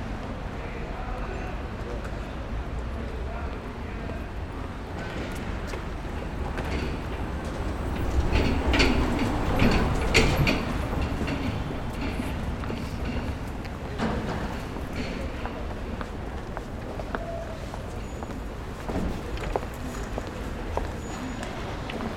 St Martin Ln, Londres, Royaume-Uni - St Martin Ln
Ambiance in the street, Zoom H6